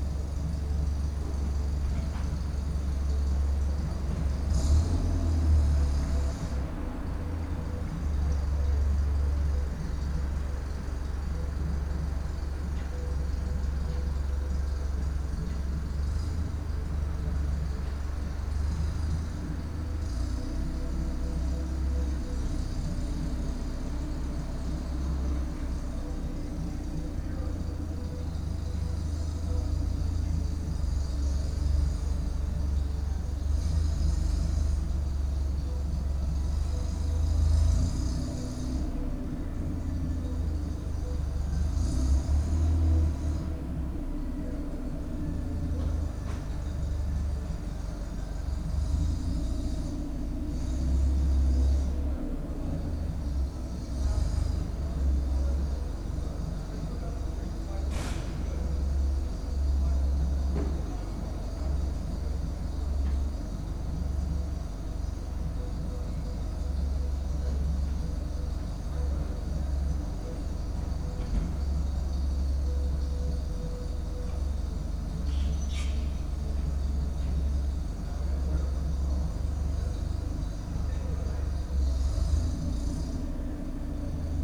nervous driver (not able to shut down the motor)
the city, the country & me: april 19, 2011
Berlin, Germany, April 2011